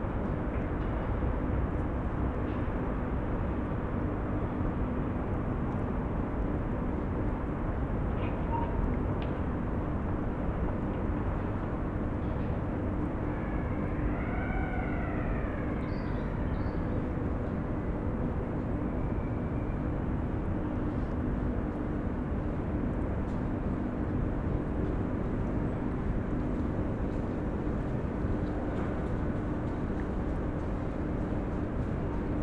Berlin, Plänterwald, Spree - sunday soundscape
sunday afternoon industrial soundscape, sounds around the heating plant, from accross the river.
(tech note: SD702, audio technica BP4025)